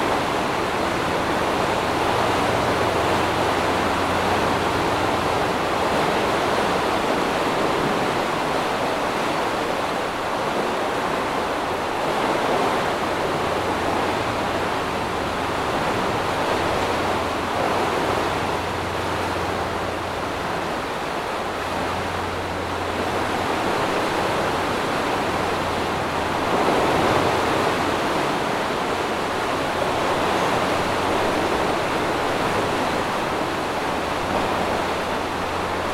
Rue de La Muraille, Vions, France - Remoux du Rhône
Sous le pont ferroviaire de Vions, à l'écoute des remous du fleuve autour des piles du pont, belle vue en perspective à cet endroit près d'une règle de mesure du niveau.